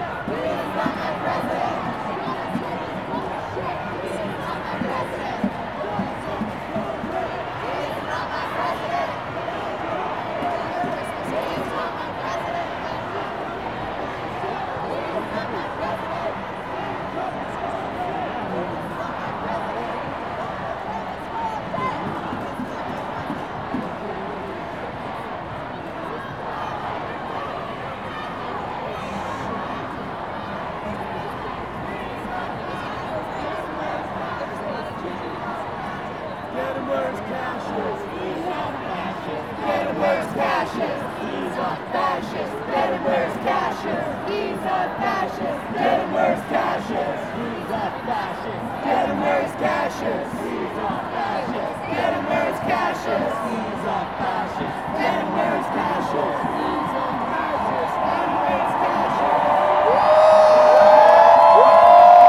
Midtown, New York, NY, USA - Anti-Trump protests near Trump Tower
Anti-Trump Protests in 5th Avenue next to Trump Tower.
Zoom H4n
12 November 2016, 16:30